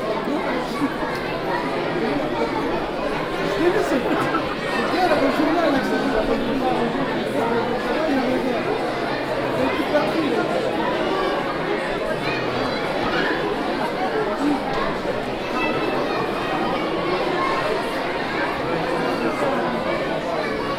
Route de Bressey sur Tille, Couternon, France - Waiting before kindergarten show
France, Couternon, Municipal hall, Waiting, kindergarten show, children, crowd, Binaural, Fostex FR-2LE, MS-TFB-2 microphones